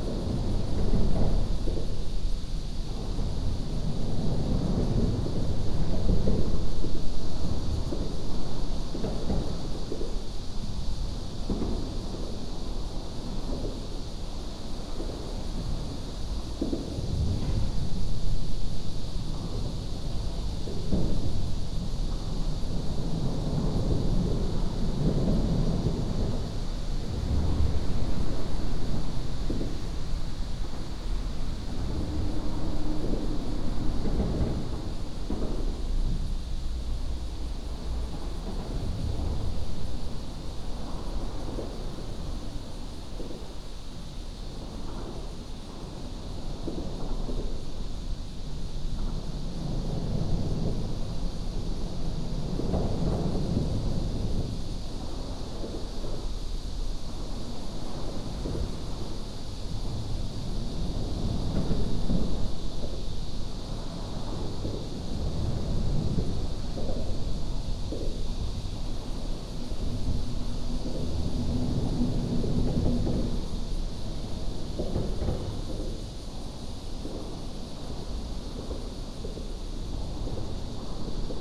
Zhongli Dist., Taoyuan City - Under the highway
Under the highway, Cicada cry, Traffic sound
Taoyuan City, Taiwan, 28 July 2017